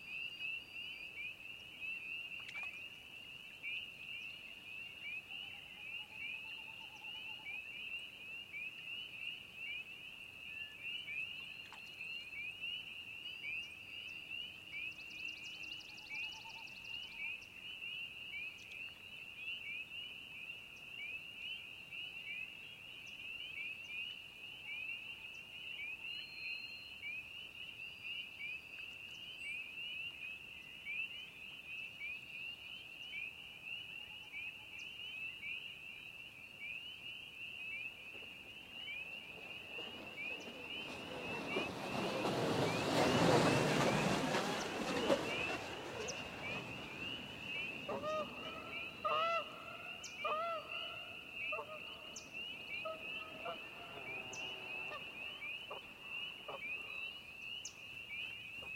Canada Geese come out of the dark to fly over our heads and into the lake. You can hear the trout jumping and the winnowing of the Snipe overhead. Location, Gareloach Lake, Pictou Co.